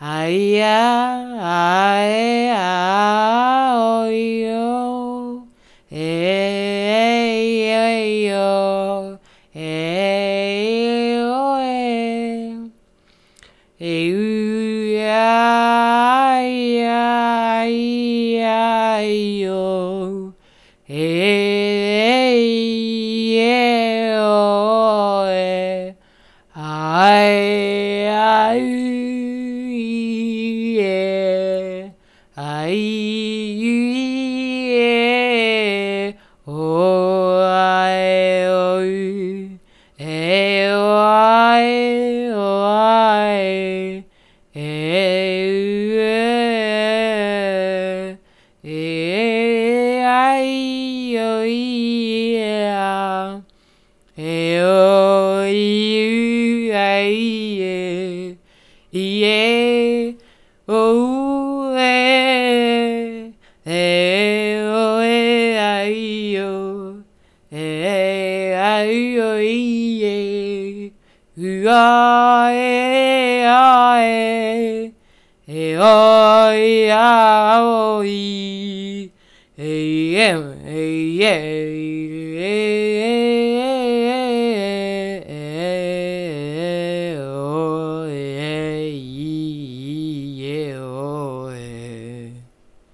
"dead drops sonore à distance"
Questionnement et détournement du langage sont les sujets abordés à travers l’installation de ces deux Deads Drops sonores. Entre la ville de Rennes et Barcelone les fichiers sonores contenus dans ces Dead Drops constituent un moyen de communication par l’utilisation d’un langage abstrait, voire d’un nouveau langage, à la manière d’Isidore Isou dans son œuvre « traité de brave et d’éternité » ou encore Guy Debord qui explore le détournement au près des lettristes.
Dans la dead drop de Barcelone se trouve l’élocution des consonnes de la description du projet, alors que dans celle de Rennes l’élocution des voyelles. Cela opère donc une discussion entre les deux villes par un dialogue de mise en abîme à la sonorité absurde faisant appel à la notion de répétition et de non-sens.